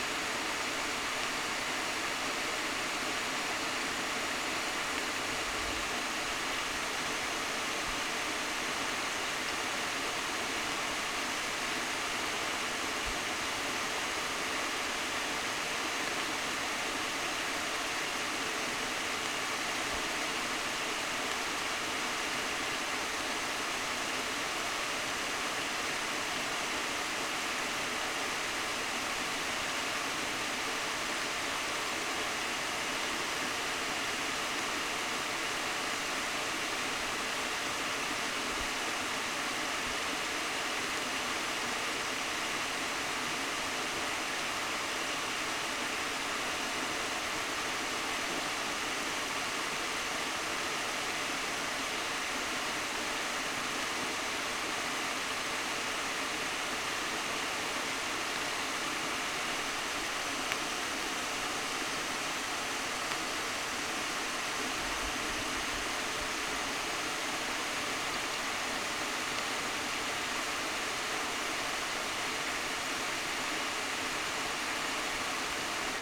Barrage de Thurins - haut
Barrage de Thurins
en haut du barrage
Thurins, France, November 2010